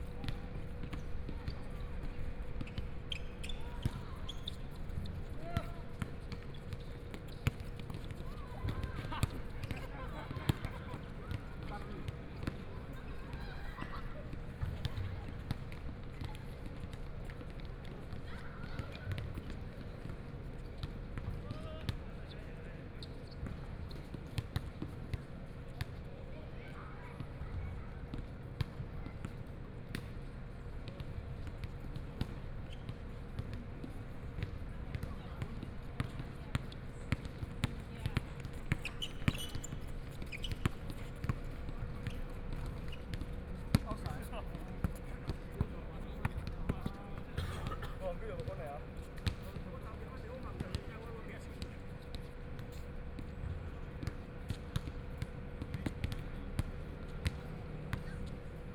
{
  "title": "Xihu Sports Park, Xihu Township - Night basketball court",
  "date": "2014-01-05 19:35:00",
  "description": "Night basketball court, Play basketball, Traffic Sound, Zoom H4n+ Soundman OKM II",
  "latitude": "23.96",
  "longitude": "120.48",
  "altitude": "19",
  "timezone": "Asia/Taipei"
}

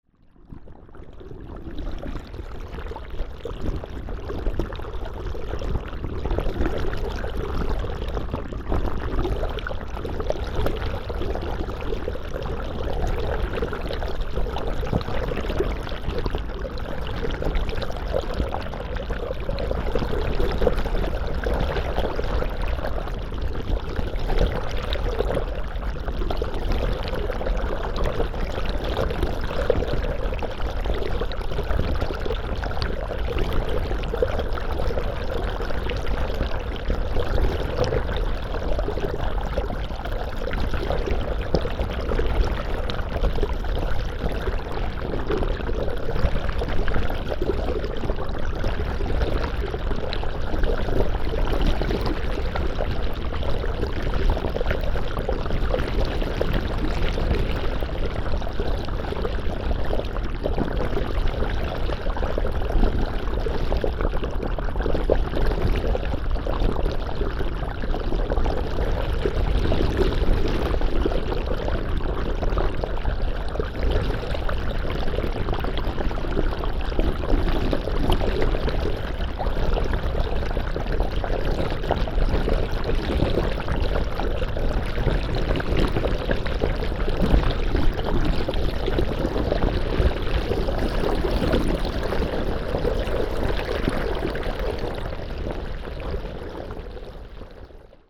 {
  "title": "Mont-Saint-Guibert, Belgique - The river Orne",
  "date": "2016-04-10 15:10:00",
  "description": "Recording of the river Orne, in a pastoral scenery.\nRecorded underwater with a DIY hydrophone.",
  "latitude": "50.63",
  "longitude": "4.63",
  "altitude": "94",
  "timezone": "Europe/Brussels"
}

